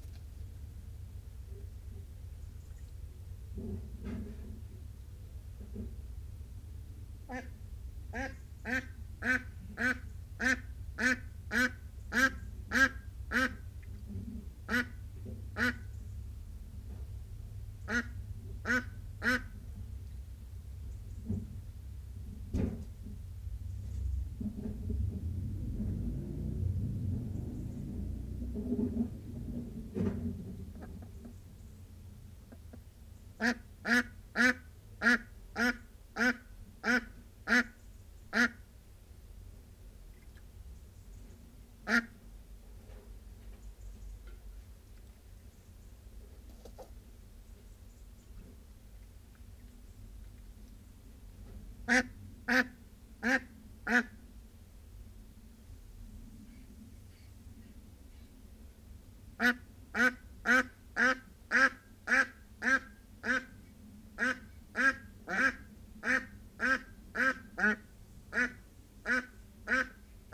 Honey has become extremely broody and we have had to pop some fake eggs into the duck house to encourage her to lay in there rather than in her secret nests all over the garden from which it is much harder to retrieve the actual, edible eggs. So there are fake eggs in the duck house, and then she and Pretzel usually lay 2 in there overnight. Come morning, Honey can be found clucking over the "clutch" very protectively, so I decided to record her inside the duck house. I have left in the bit of handling noise as when I approached to put my recorder gently in the corner, she made an amazing warning noise - very huffy and puffy - which I have never heard before. If I cut out the handling noise, the intrusion on her space and subsequent protective warning sound would be lost, and I think they are brilliant little sonic insights into duck behaviour.